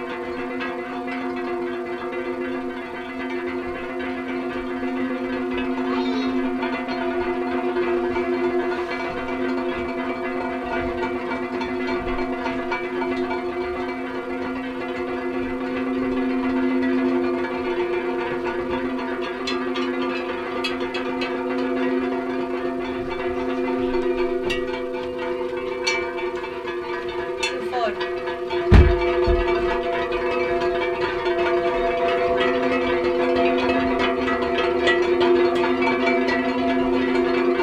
Stazione Topolo 1999, resonance ensemble, Italy